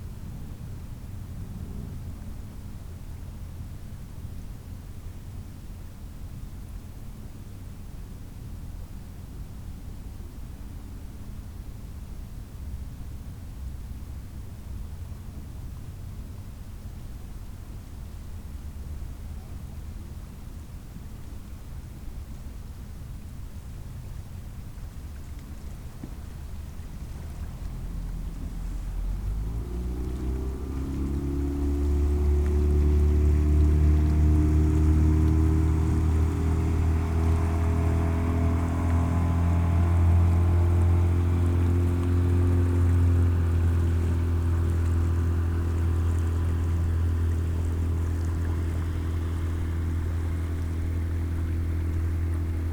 Sollefteå, Sverige - Boats in the river
On the World Listening Day of 2012 - 18th july 2012. From a soundwalk in Sollefteå, Sweden. Boats in the river Ångermanälven in Sollefteå. WLD
Sollefteå, Sweden, 18 July 2012